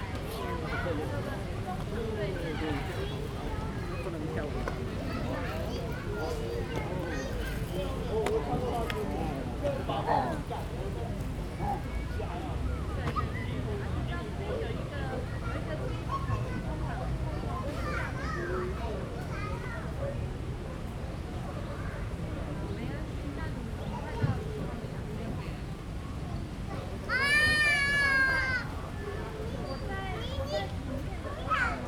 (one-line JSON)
{"title": "臺南公園, East Dist., Tainan City - Children's play area", "date": "2017-02-18 16:30:00", "description": "in the Park, Children's play areas, The old man\nZoom H2n MS+XY", "latitude": "23.00", "longitude": "120.21", "altitude": "21", "timezone": "Asia/Taipei"}